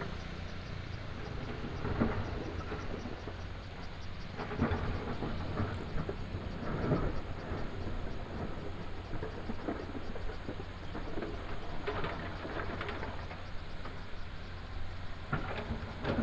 虷仔崙橋, Jinlun, Taimali Township - On the river bank
On the river bank, Stream sound, Bird call, Factory construction sound behind
Binaural recordings, Sony PCM D100+ Soundman OKM II